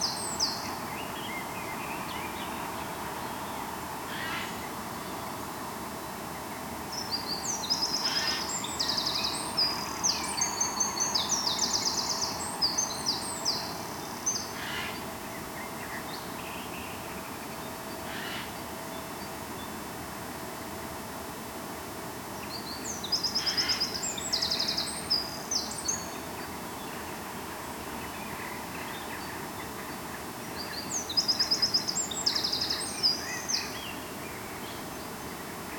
Rue Devant les Grands Moulins, Malmedy, Belgique - Morning birds, bells at 8 am.
Drone from the air conditionning, or electric?
Tech Note : SP-TFB-2 AB microphones → Sony PCM-M10.
Liège, Wallonie, België / Belgique / Belgien, 17 April 2022